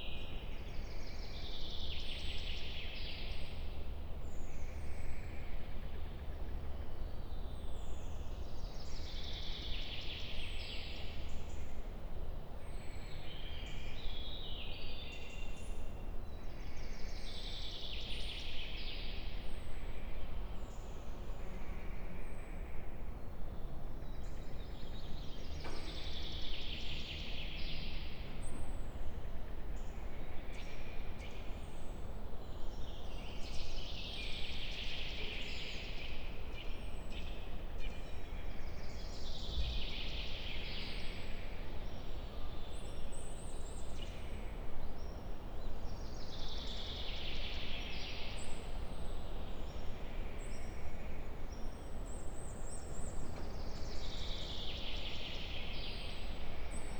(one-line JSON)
{"title": "ex Soviet military base, Vogelsang - inside abandoned cinema", "date": "2017-06-16 12:25:00", "description": "ex Soviet military base, Garnison Vogelsang, forest sounds heard inside former cinema / theater\n(SD702, MKH8020)", "latitude": "53.05", "longitude": "13.37", "altitude": "56", "timezone": "Europe/Berlin"}